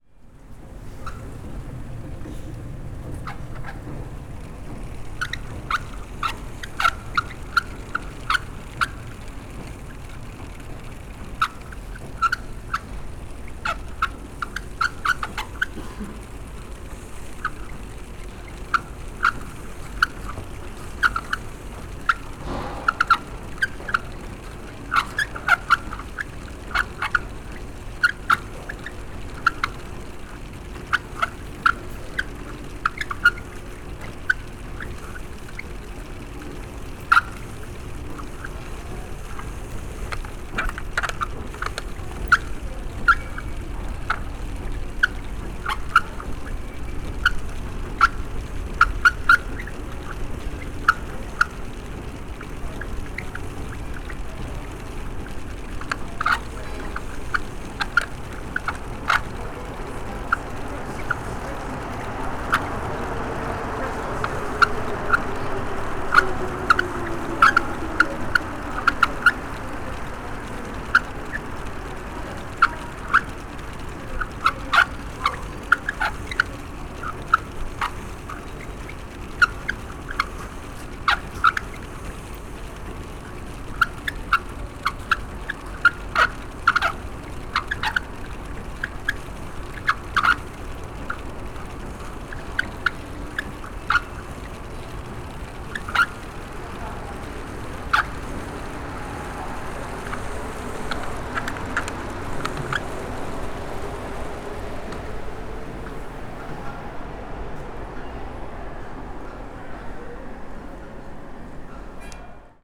equipment used: M-Audio MicroTrack II
Squeaky escalator in Peel Metro near the Rue Metcalfe exit